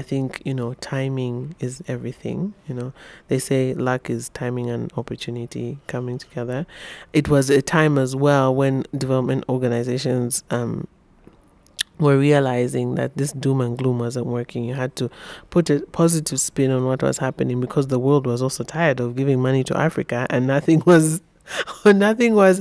… after interviewing the media consultant and journalist Samba Yonga on her role as co-founder of the Women’s history museum in June, I managed to catch up with Samba for a more extensive interview on her personal story; actually it was the very last day and even hours of my stay in Zambia… so here’s an excerpt from the middle of the interview with Samba, where she lets us share into her view back home from London and, her reflections and research about the Zambian and wider expat community abroad…
listen to the entire interview with Samba Yonga here:
Lusaka Province, Zambia, 12 December 2018, ~12:00